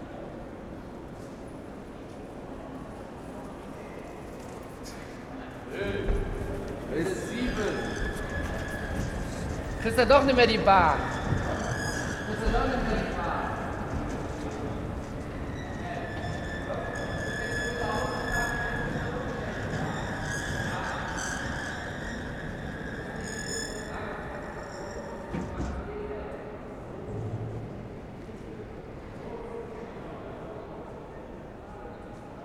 sunday night, Cologne main station, singing escalator, people going down to the subway
Cologne, Germany